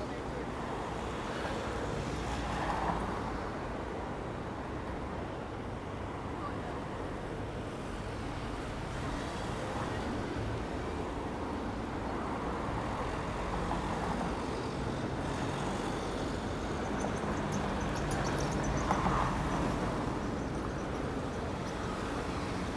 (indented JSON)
{
  "title": "пл. Героїв Чорнобиля, Вінниця, Вінницька область, Україна - Alley12,7sound9Centralbridge",
  "date": "2020-06-27 12:20:00",
  "description": "Ukraine / Vinnytsia / project Alley 12,7 / sound #9 / Central bridge",
  "latitude": "49.23",
  "longitude": "28.48",
  "altitude": "234",
  "timezone": "Europe/Kiev"
}